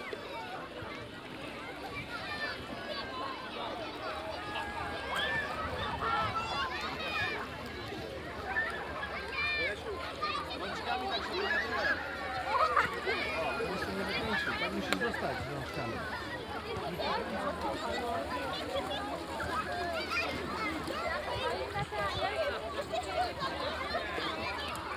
Cichowo - at the beach of Cichowo lake
(binaural) sounds from around the beach and patches of grass at the Cichowo lake.